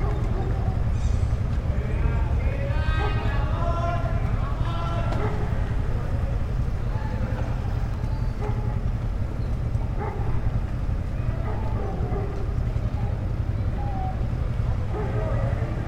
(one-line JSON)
{"title": "Carrera, Bogotá, Colombia - Parque La Araña, Venecia", "date": "2021-05-21 17:30:00", "description": "It is a park in the Venecia neighborhood in the south of the city. A constant wind is evident. Car and motorcycle engines passing from time to time through the quietest part of the neighborhood. Constant dog barking. People running and whistles and shouts of young people who inhabit the neighborhood, maintaining a common slang within the area.", "latitude": "4.59", "longitude": "-74.14", "altitude": "2563", "timezone": "America/Bogota"}